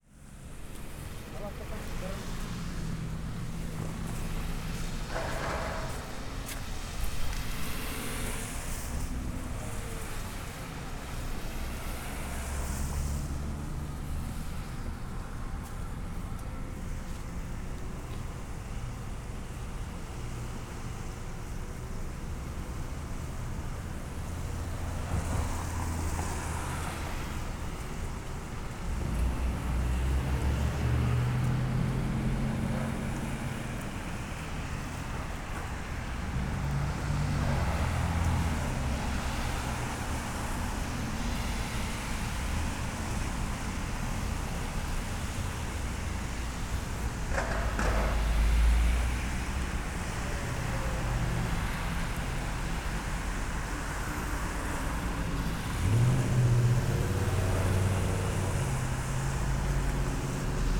Montreal: St. Laurent and Rachel - St. Laurent and Rachel
Standing in front of Patati Patata